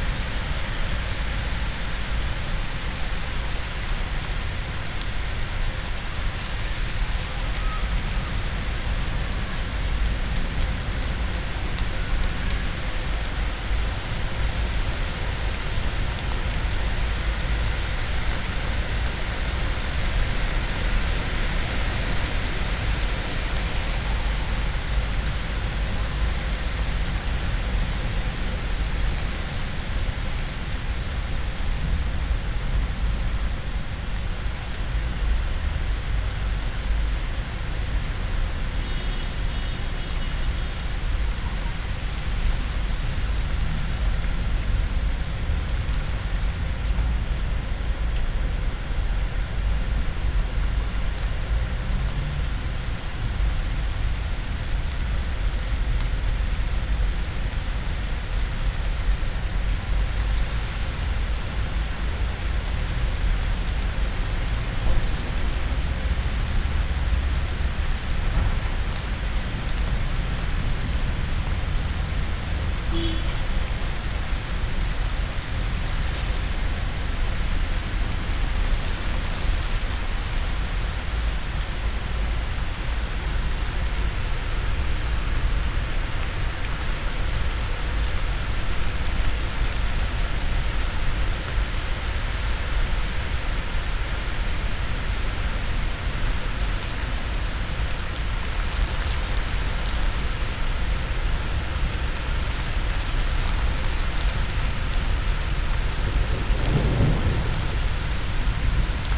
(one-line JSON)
{"title": "while windows are open, Maribor, Slovenia - autumn windy storm", "date": "2012-10-07 17:47:00", "description": "autumn sonic panorama of floating leaves and passers-by caught in the moment of unexpected haste", "latitude": "46.56", "longitude": "15.65", "altitude": "285", "timezone": "Europe/Ljubljana"}